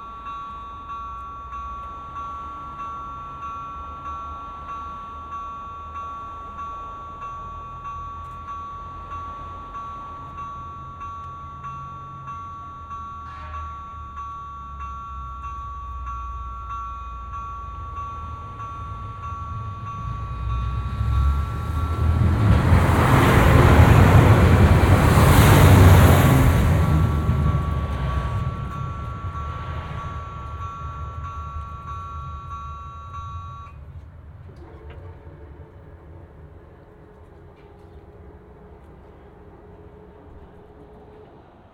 Train bar at Altea, Hiszpania - (28) BI Train passing
Binaural recording while barrier dropped, waiting for the train to pass.
Zoom H2n, Soundman OKM
Comunitat Valenciana, España, 8 November 2016